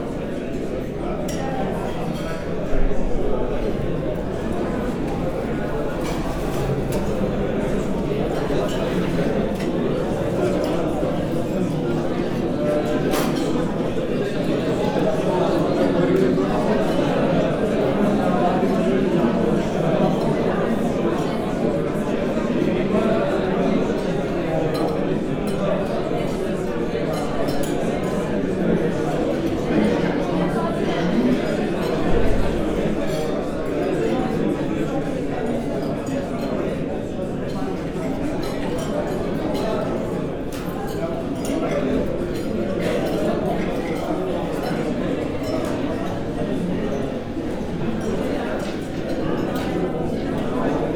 Quartier du Biéreau, Ottignies-Louvain-la-Neuve, Belgique - Univeristy restaurant place des Wallons
The noisy ambience of an university restaurant. Students can find here cheap but good foods.